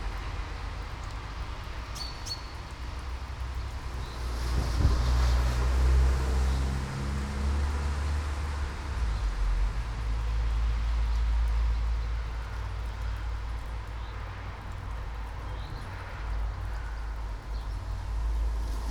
all the mornings of the ... - may 5 2013 sun
Maribor, Slovenia, 5 May